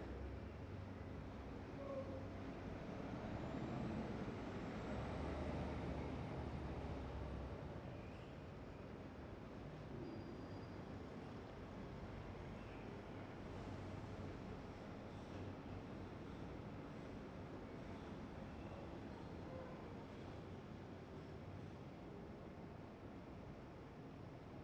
recording of the courtyard during daytime, before b. viola`s "reflections" exhibition opening may 11th 2012. 2 x neumann km 184 + sounddevice 722 @ villa panza, varese.
11 May 2012, 12:13